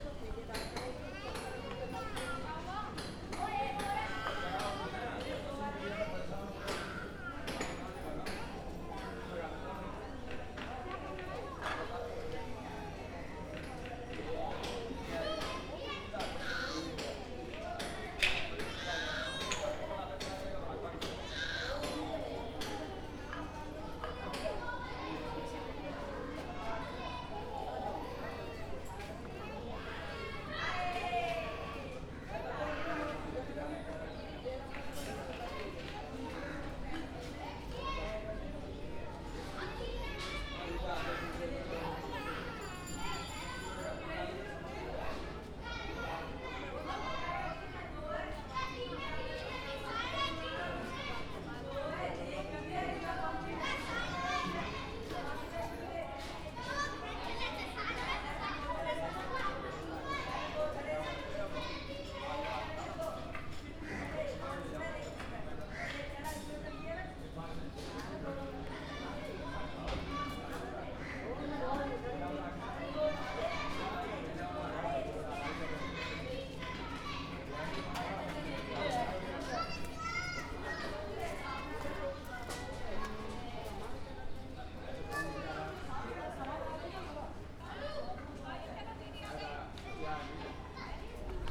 Khirki, New Delhi, Delhi, India - The street outside the old mosque
Life just outside the old mosque.